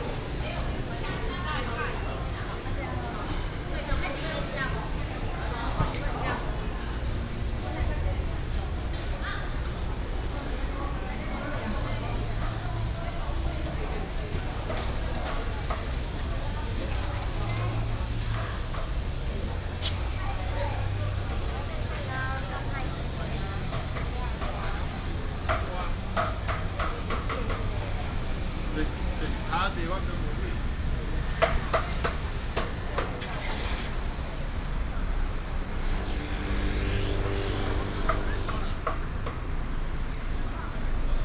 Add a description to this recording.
Traditional evening market, traffic sound